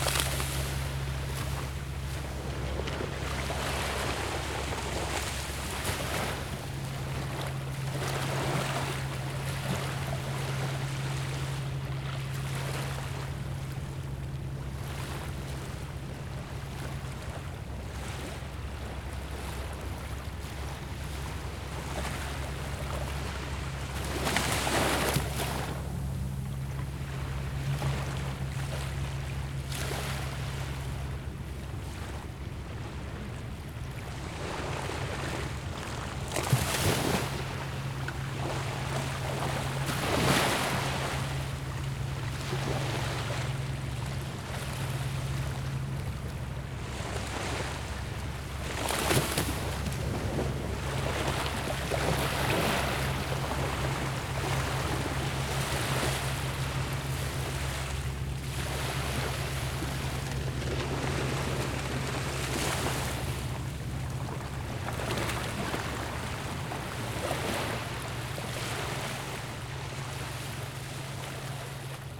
Brooklyn, NY, USA - Coney Island Creek Park
Coney Island Creek Park.
Zoom H4n
November 2016